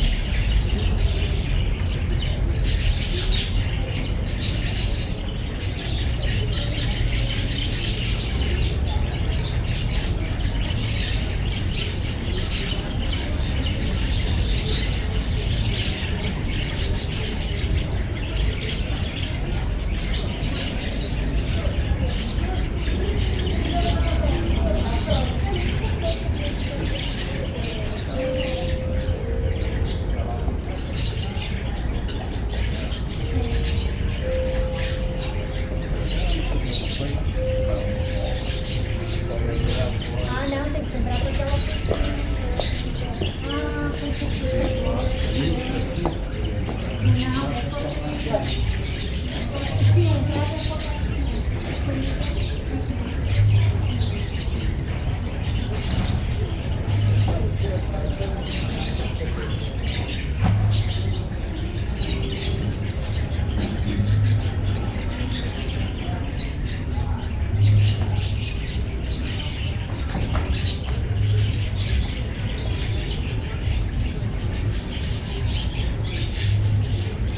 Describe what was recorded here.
Travessa do Sequeiro 11, 2715-311 Lisbon, Portugal. [I used an MD recorder with binaural microphones Soundman OKM II AVPOP A3]